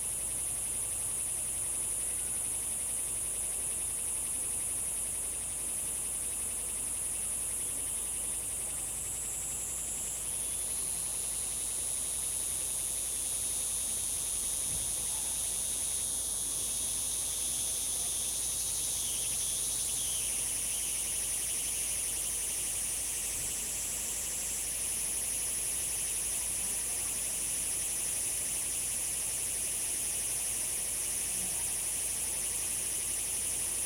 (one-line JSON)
{"title": "綠屋民宿, 桃米里 Puli Township - Birds singing", "date": "2015-08-26 15:47:00", "description": "Birds singing\nZoom H2n MS+XY", "latitude": "23.94", "longitude": "120.92", "altitude": "495", "timezone": "Asia/Taipei"}